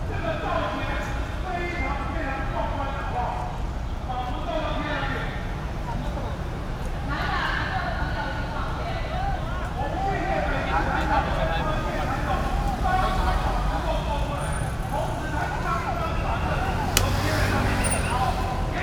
Control Yuan, Taipei - labor protests
labor protests, Sony PCM D50 + Soundman OKM II
台北市 (Taipei City), 中華民國, 1 May